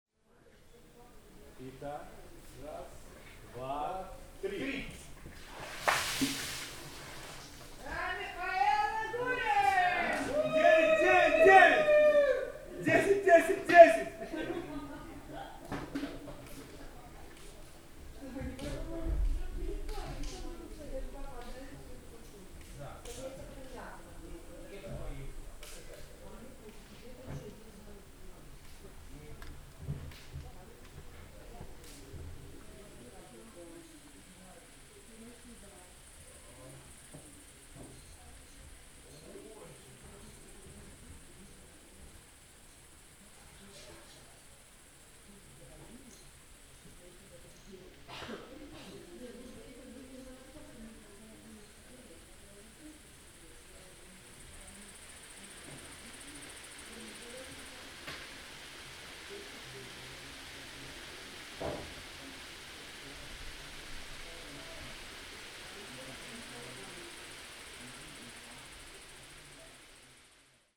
Каптаруны, Беларусь - Mikhail Gulin
Mihail Hulin, straight out of sauna, poors a bucket of water over himself.
Night. Crickets. Approving voices.
collection of Kaptarunian Soundscape Museum